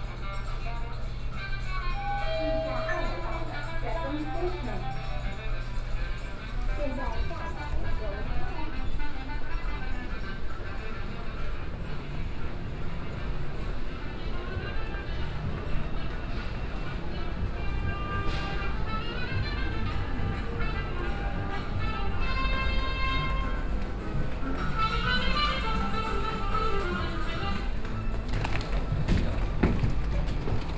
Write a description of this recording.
In the Elevator, Sony PCM D50 + Soundman OKM II